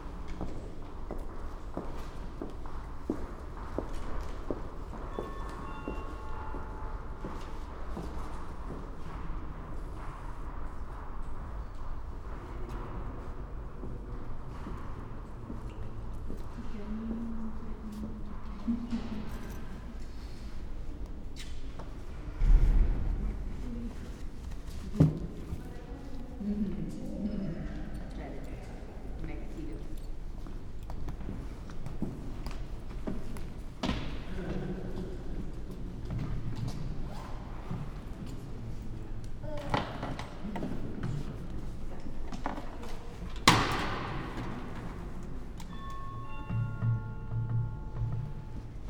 berlin, john-f.-kennedy-platz: townhall - the city, the country & me: townhall, citizen centre
ambience of the hall in front of the citizen centre
the city, the country & me: october 29, 2014
29 October 2014, 10:29